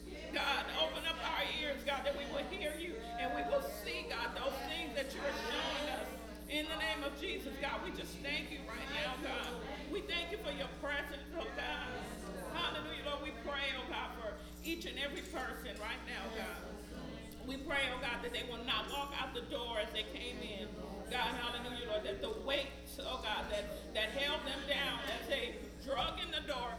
Covenant Worship Center 2622 San Pablo Ave, Berkeley, CA, USA - Prayer
This was recorded during the New Year's service for 2017~! Before the service began there was a lengthy prayer session. This was so long before the actual beginning of the event that not many people were there. I was seated in the front of the Church recording with binaural microphones.
31 December